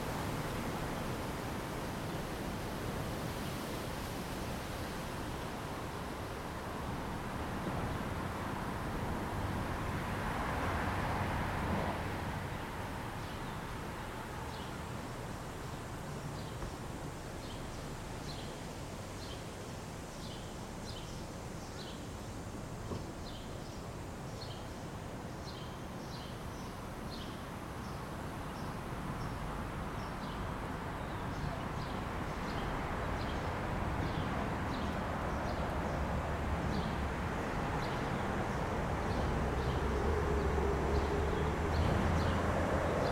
under Glendale Bridge, St. Catharines, ON, Canada - The Twelve | Under Glendale Bridge
The first recording was made with an H2n placed on the ground in the reverberant space under the Glendale Avenue Bridge crossing the Twelve Mile Creek. The site was the west side on a trail maybe 10 meters above creek level (variable because of nearby hydroelectric power generation) and perhaps about the same distance to the underside of the bridge. The second recording is 62 meters away on the pedestrian bridge where I dropped a hydrophone into the water; the current was quite swift. The bridge was built in 1975 replacing a single lane bridge built in 1912 and its story includes local government amalgamation and the rise of shopping centres in North America; the Pen Centre on Glendale Avenue was built in 1958.
Golden Horseshoe, Ontario, Canada, 28 July 2020, 11:30